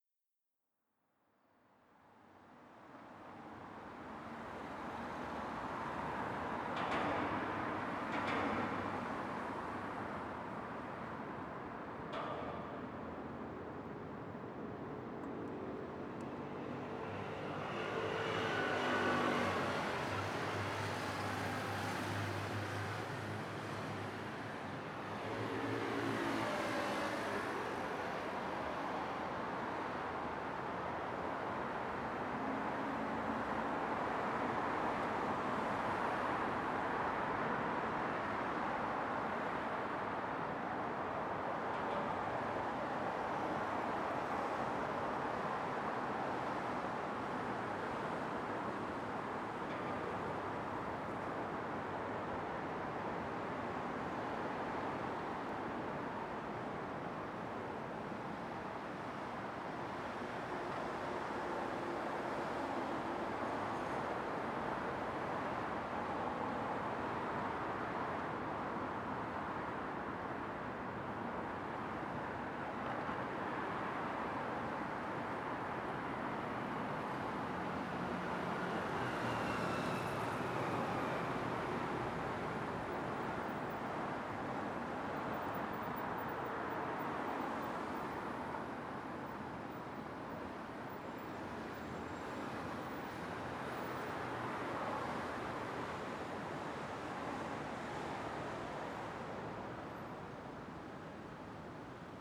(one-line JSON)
{"title": "대한민국 서울특별시 서초구 우면동 산69-5 - Umyun-dong, Sun-am Bridge", "date": "2019-10-04 22:39:00", "description": "Umyun-dong, Sun-am Bridge\n우면동 선암교 밑", "latitude": "37.46", "longitude": "127.02", "altitude": "39", "timezone": "Asia/Seoul"}